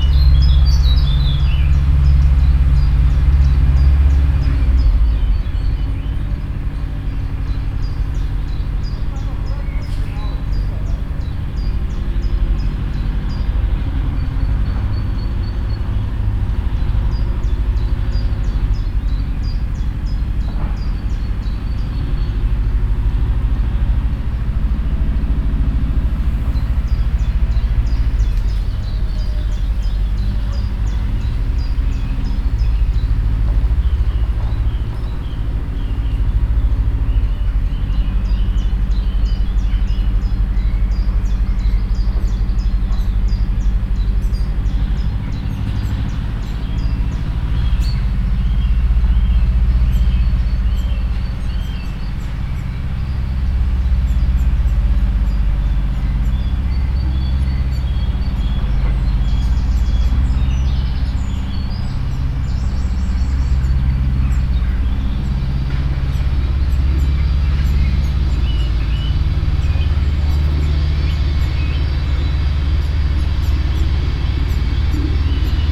Geinegge, Hamm, Germany - borderline mix at the Geinegge
i’m sitting on a bench right at a local stream know as “Geinegge”; it’s a small strip of land along the stream, in parts even like a valley, re-invented as a kind of nature reserve… immediately behind me begins a seizable industrial area… listening to the seasonal mix the borderline creates...
8 May 2015, 14:55